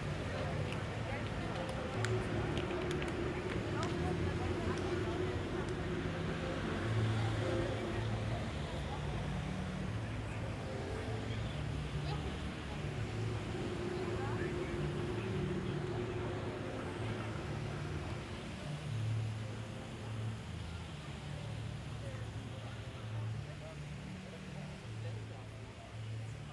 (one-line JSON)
{"title": "Alexanderplatz, Berlin, Germany - Construction works, a tour guide, a man playing percussions, trams.", "date": "2021-09-02 14:00:00", "description": "A sunny day in September.\nTascam DR-05 and Soundman OKM1.", "latitude": "52.52", "longitude": "13.41", "altitude": "38", "timezone": "Europe/Berlin"}